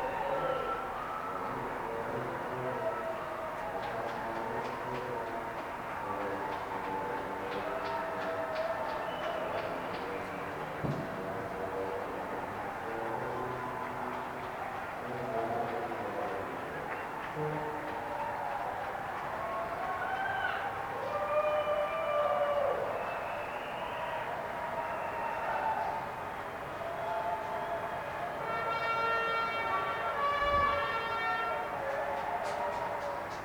Redland, Bristol, UK - “Clap for Carers”
A social-media inspired event designed to encourage those working to support the elderly or ill during the Covid-19 pandemic in March 2020. Someone suggested that, at 8.00pm on 27th March, everyone should show their appreciation by cheering or clapping from their windows. The suggestion went viral online, and this was the result. This was recorded from a back garden and I think most people were cheering from their front windows, so the sound isn’t as clear as it should be. Recorded on a Zoom H1n.